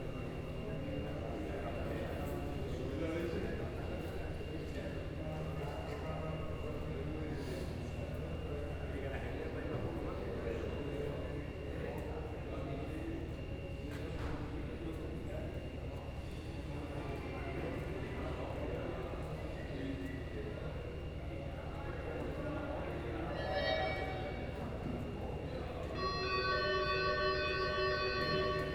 La clásica forma de subastar el pescado a voces ha sido remplazada por la subasta electrónica pero los gritos y las típicas formas de subastar pescado siguen presentes / The classical way of auction by voice now is replaced by electronic biding but the shouts and the typical manners on a fish auction still remains